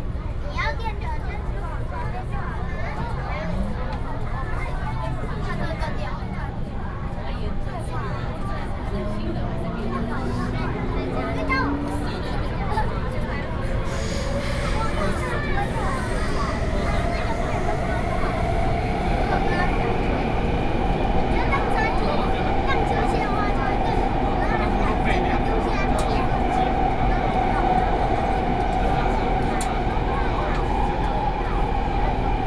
{"title": "Blue Line (Taipei Metro), Taipei city - soundwalk", "date": "2013-07-09 15:45:00", "description": "from Taipei Main Station to Zhongxiao Fuxing Station, Sony PCM D50 + Soundman OKM II", "latitude": "25.04", "longitude": "121.53", "altitude": "20", "timezone": "Asia/Taipei"}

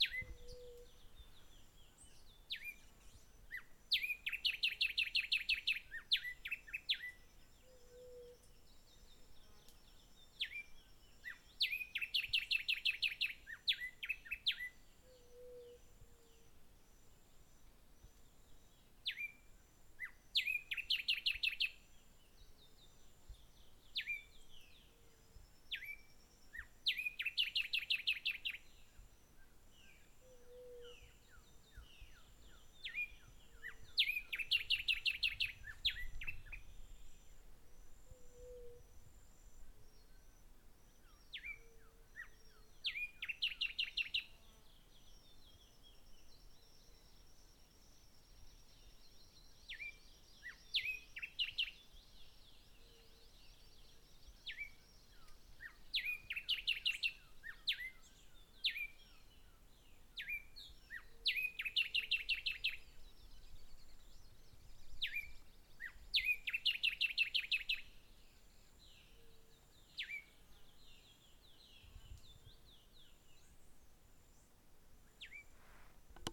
{"title": "Hato Corozal, Casanare, Colombia - Aves Cantando", "date": "2013-06-02 03:02:00", "description": "Soy muy regular para la ornitologìa", "latitude": "6.03", "longitude": "-71.94", "altitude": "547", "timezone": "America/Bogota"}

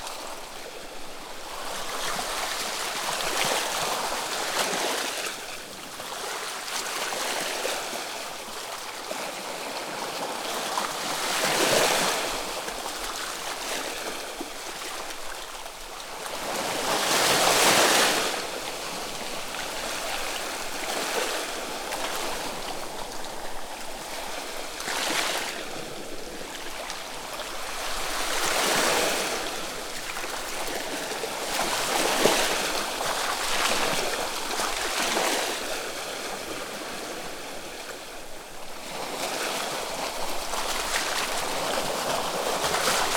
{"title": "Daintree Rainforest, QLD, Australia - Waves at the mouth of Emmagen Creek", "date": "2016-12-16 11:00:00", "description": "hoping a crocodile wouldn't emerged from the sea and engulf me..", "latitude": "-16.04", "longitude": "145.46", "timezone": "Europe/Berlin"}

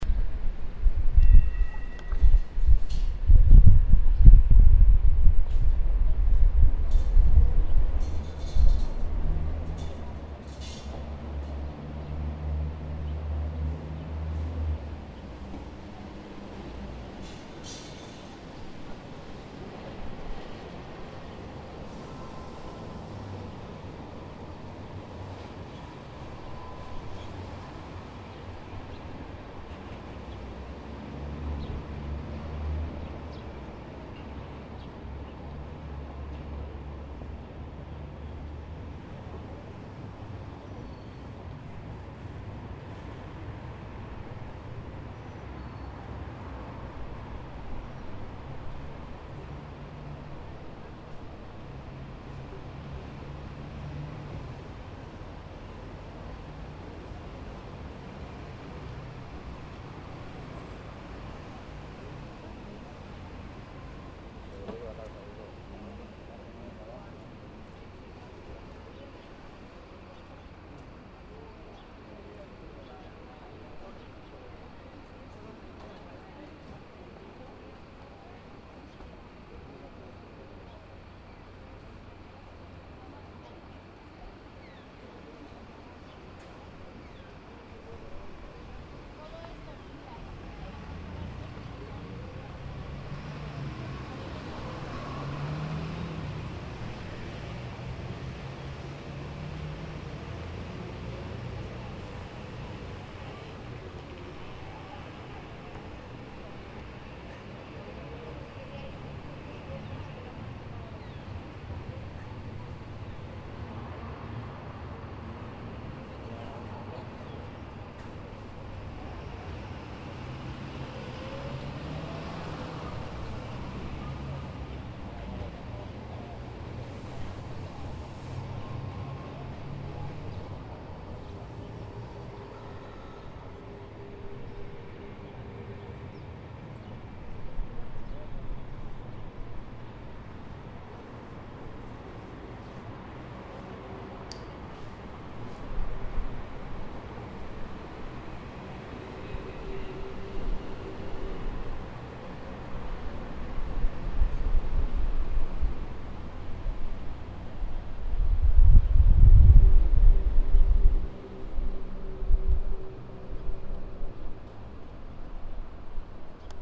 {"title": "bilbao, arenal drive", "description": "arenal drive in sunday. 16:30h.", "latitude": "43.26", "longitude": "-2.92", "altitude": "9", "timezone": "Europe/Berlin"}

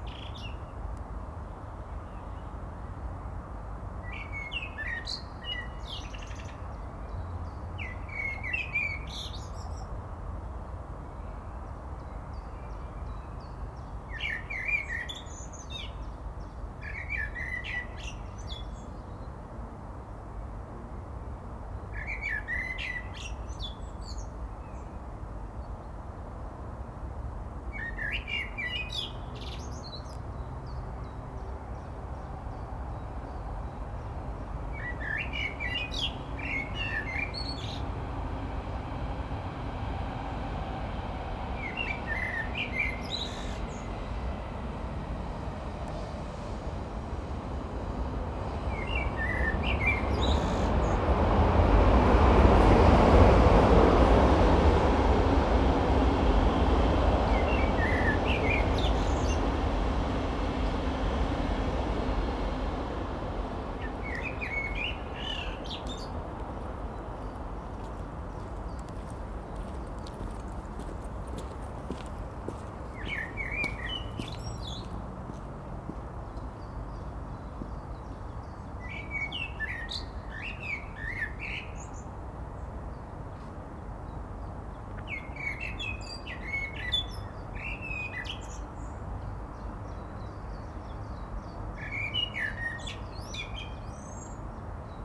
{"title": "Friedhof Grunewald, Bornstedter Straße, Berlin, Germany - Grunewald cemetery - blackbird and watering can", "date": "2014-06-15 12:29:00", "description": "Sunday midday atmosphere. Fine sunny weather. A blackbird sings and a man looking after the graves fills a plastic watering can. Trains pass.", "latitude": "52.50", "longitude": "13.28", "altitude": "46", "timezone": "Europe/Berlin"}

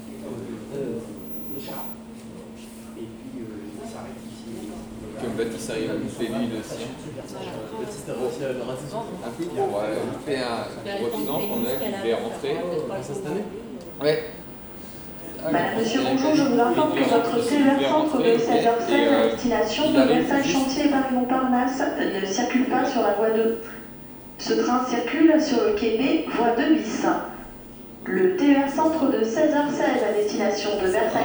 {"title": "Rambouillet, France - Rambouillet station", "date": "2019-01-01 15:50:00", "description": "The main waiting room of the Rambouillet station. People talking while they wait their train, and some annoucements about a platform change.", "latitude": "48.64", "longitude": "1.83", "altitude": "161", "timezone": "Europe/Paris"}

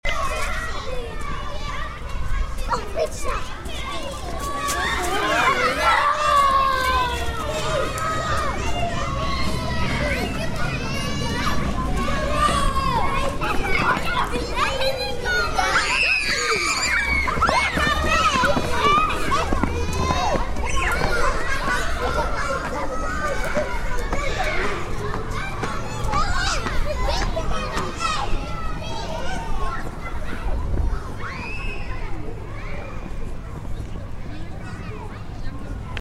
{"title": "School, Zoetermeer", "date": "2010-10-13 10:30:00", "description": "school children playing during break", "latitude": "52.06", "longitude": "4.50", "timezone": "Europe/Amsterdam"}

